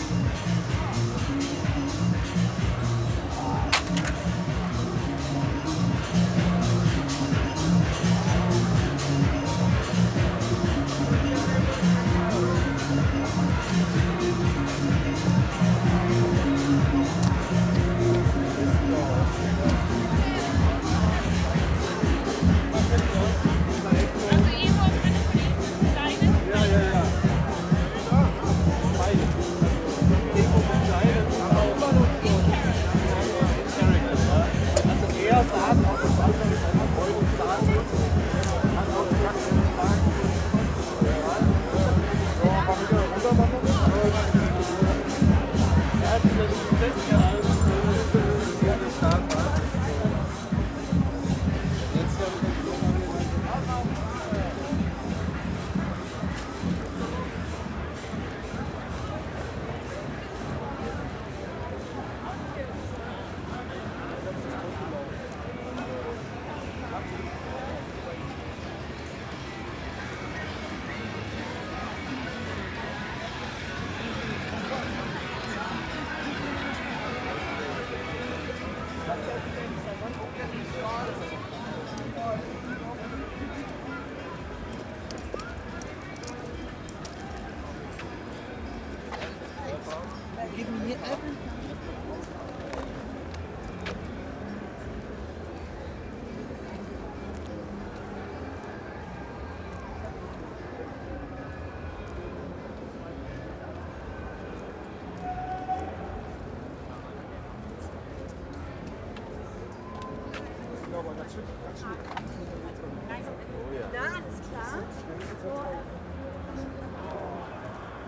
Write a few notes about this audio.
1st may soundwalk with udo noll, the city, the country & me: may 1, 2011